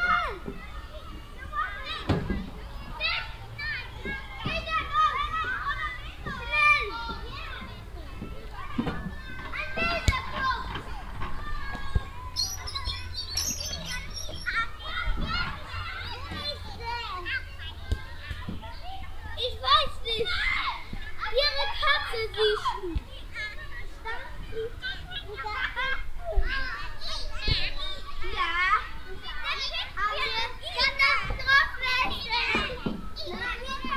hosingen, kindergarden, playground
At a playground of the local kindergarden. A larger group of kids either playing ball or climbing on a wooden construction.
Recorded in the morning time on a warm, windy summer day.
Hosingen, Kindergarten, Spielplatz
Auf einem Spielplatz im lokalen Kindergarten. Eine größere Gruppe von Kindern spielt Ball oder klettert auf eine Holzkonstruktion. Aufgenommen am Morgen an einem warmen windigen Sommertag.
Hosingen, école maternelle, cour
Dans la cour de l’école maternelle du village. Un important groupe d’enfants jouent à la balle ou escaladent une construction en bois. Enregistré le matin un jour d’été chaud et venteux.
Project - Klangraum Our - topographic field recordings, sound objects and social ambiences